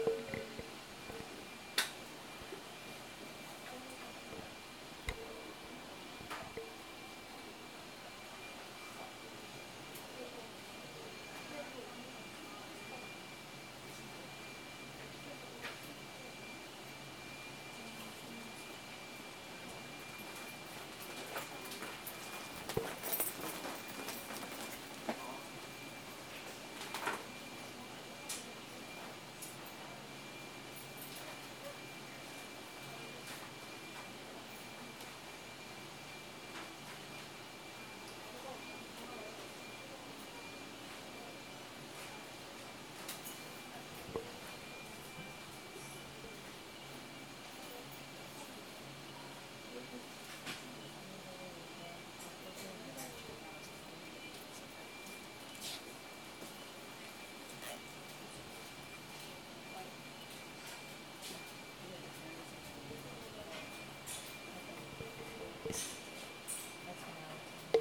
W Mt Royal Ave, Baltimore, MD - MICA Store
The back of the MICA art supply store.